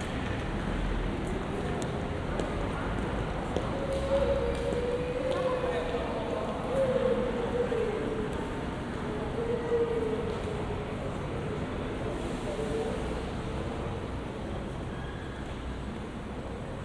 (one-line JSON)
{"title": "Bolonia, Włochy - Announce trains", "date": "2016-12-14 12:41:00", "description": "Announce trains ( binaural)", "latitude": "44.51", "longitude": "11.34", "altitude": "47", "timezone": "GMT+1"}